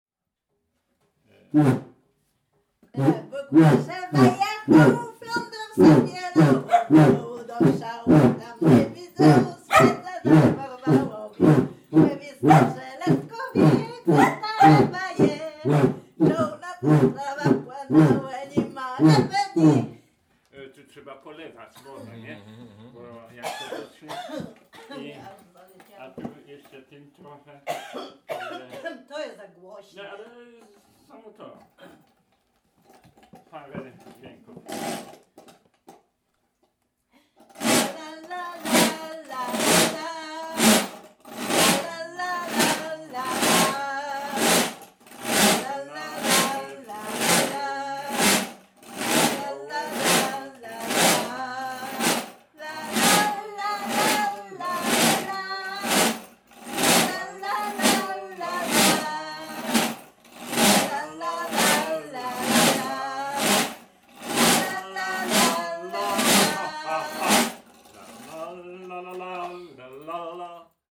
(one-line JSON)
{"title": "Hopowo, Polska - Burczybasy, old Kashubian instrument", "date": "2014-06-14 12:09:00", "description": "Dźwięki nagrane w ramach projektu: \"Dźwiękohistorie. Badania nad pamięcią dźwiękową Kaszubów.\" The sounds recorded in the project: \"Soundstories. Investigating sonic memory of Kashubians.\"", "latitude": "54.26", "longitude": "18.24", "altitude": "229", "timezone": "Europe/Warsaw"}